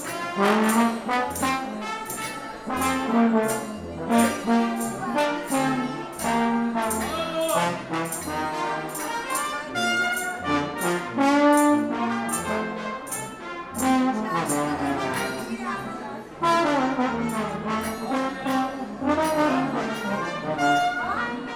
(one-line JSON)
{"title": "Köln Hbf, U-Bahn - spontaneous carnival combo", "date": "2012-02-20 21:30:00", "description": "arriving in Köln at carnival Monday (Rosenmontag) is madness, if you're not prepared or in a bad mood. however, nice things can happen: a musician with a trombone, waiting for his underground train, sees other musicians at the opposite platform and tentatively starts to play (not on the recording, too late...). the others respond, and so a spontaneous mini concert takes place. people enjoy it, until the arriving trains dissolve everything.\n(tech note: olympus ls5)", "latitude": "50.94", "longitude": "6.96", "altitude": "54", "timezone": "Europe/Berlin"}